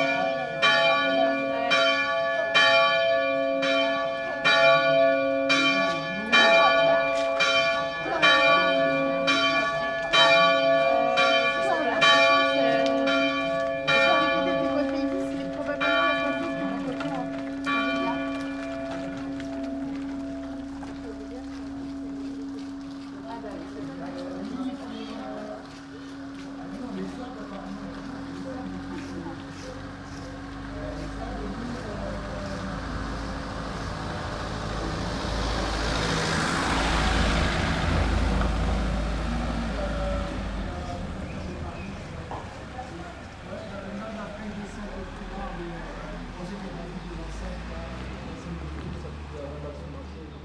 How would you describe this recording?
People walking and talking. Tech Note : Sony PCM-M10 internal microphones.